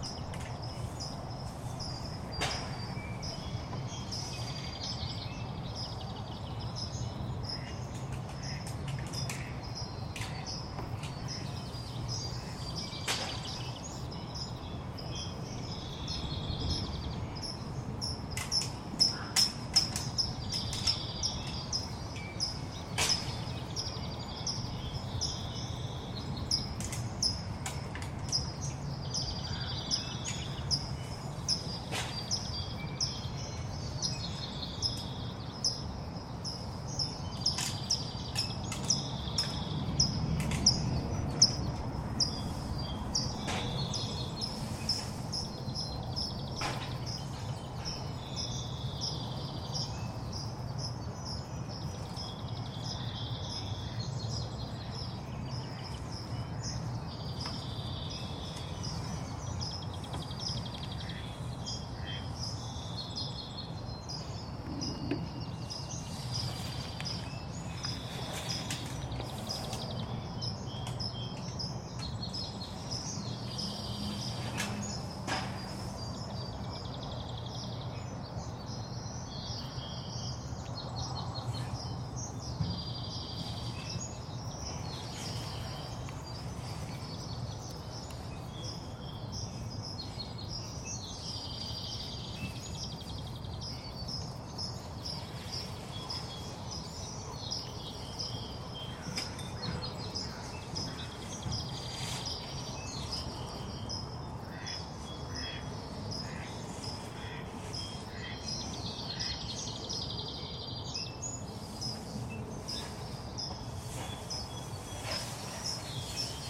Lake Merritt, Oakland, CA, USA - Dawn Chorus, Lake Merritt Bird Sanctuary
The Bird Sanctuary at Lake Merritt in Oakland, California from Dawn Chorus starting at 5:34am to roughly 6:20am. The recording was made with a pair of Lom Usi Pro microphones in X/Y configuration mixed with Sound Professionals SP-TFB-2 in-ear binaural mics.
Alameda County, California, United States, 1 May 2021